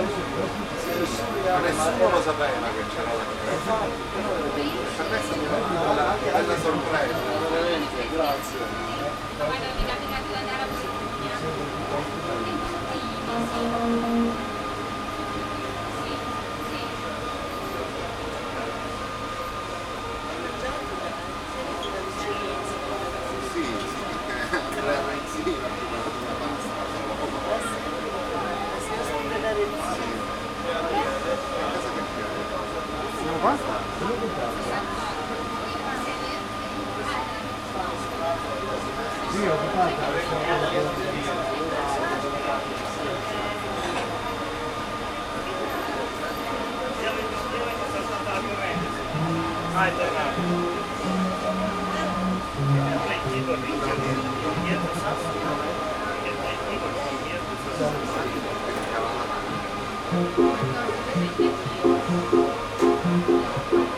take the Cage train, partenza, il treno lascia la stazione, 31/maggio/2008 h14.30 ca